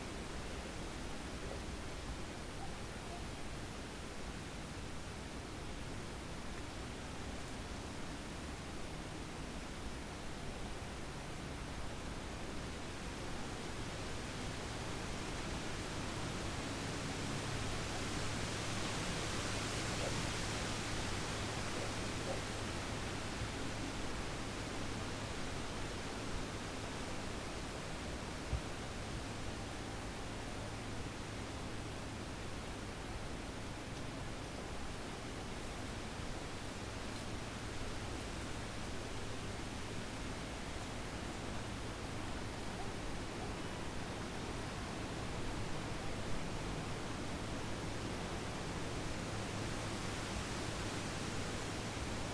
Vinarje, Maribor, Slovenia - corners for one minute
one minute for this corner - Vinarje
24 August 2012